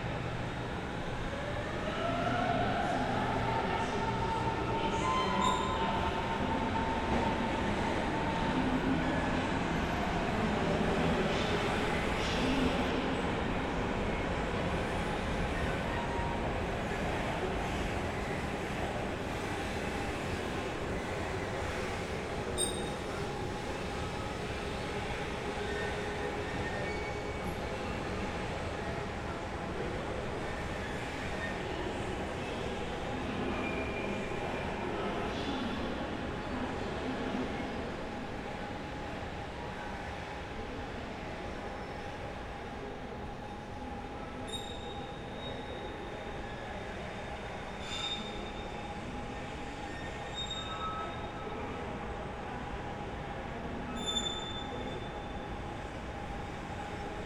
Hauptbahnhof Berlin - station walking, strolling around
Berlin Hauptbahnhof, main station, Tuesday later evening, strolling around through all layers, listening to trains of all sort, engines, people, squeaky escalators and atmospheres.
(SD702, Audio Technica BP4025)